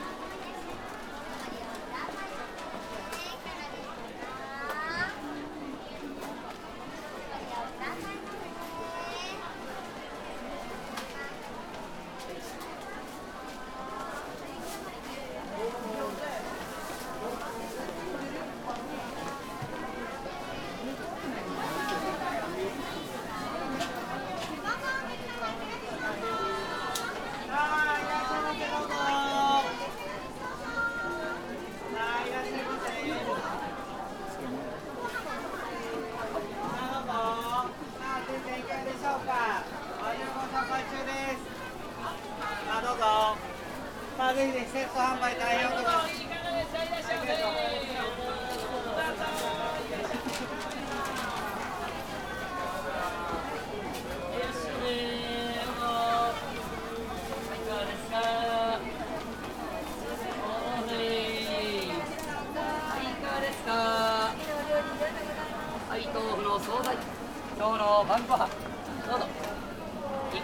Osaka northern downtown, Umeda district, Hanshin deparment store, level - level b1
sonic atmosphere of the grocery store in the basement of one of the department stores. vendors calling to buy their products, a river of customers, a vortex of sounds.